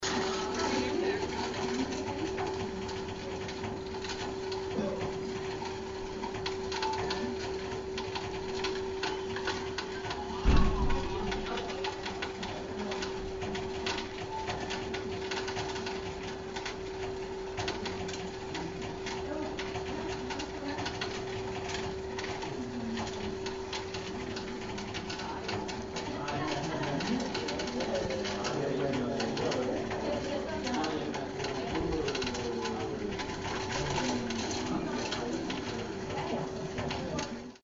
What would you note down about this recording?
The Danish Bank does everything to take off the weight that real money burdens your pockets with. here is one example: the coin counting cash counter.